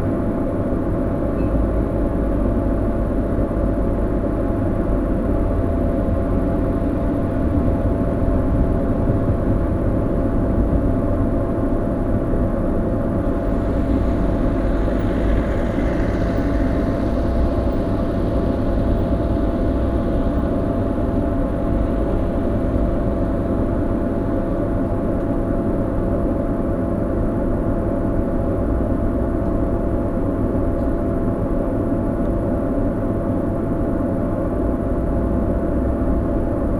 Poznan, Sobieskiego housing complex - steam vent
(binaural recording) standing on a corner of a service complex. hard to the left a sound of a steam vent. the hum of the vent is cut of on the right side as I stood right on the corner of the building, half of my head exposed to the vent, half not. behind a wall is a dry cleaning service. on the right side you can hear gentle crackles of the wall being shaken by the cleaning machines. (roland r-07 + luhd PM-01 bins)
wielkopolskie, Polska